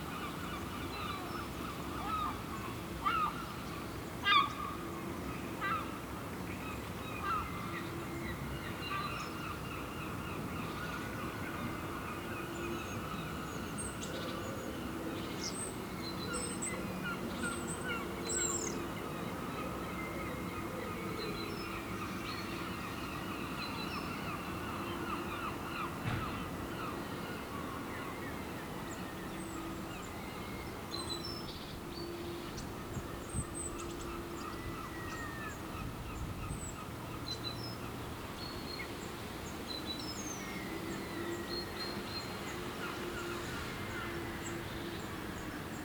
Scarborough, UK - Summer, St Mary's Church, Scarborough, UK
Binaural field recording at St Mary's Church, Scarborough, UK. Slight wind noise. Birds, seagulls, church bells